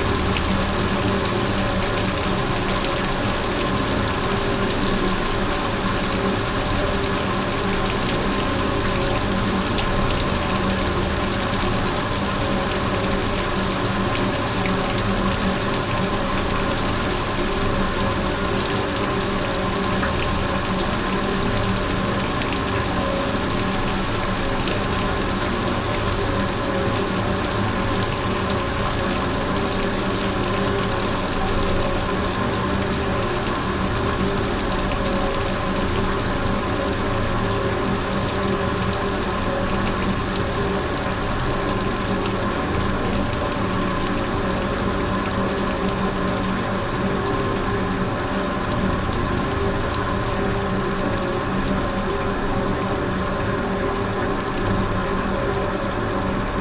Vyžuonos, Lithuania, small dam
combined recording of small dam: omni and geophone